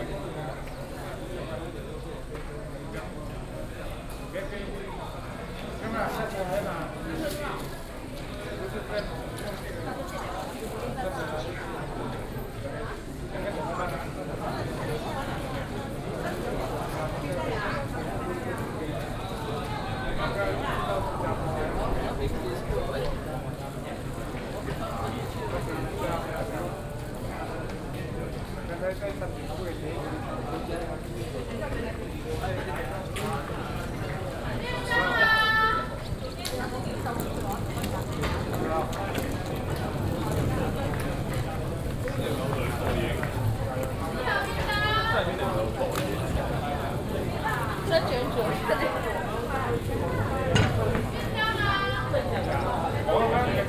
In the station platform
Sony PCM D50+ Soundman OKM II